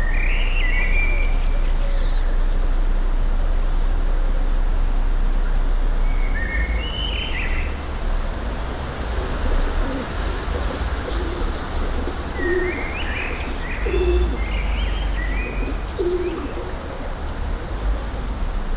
Ein Tag an meinem Fenster - 2020-03-29
Baden-Württemberg, Deutschland, 29 March, 16:05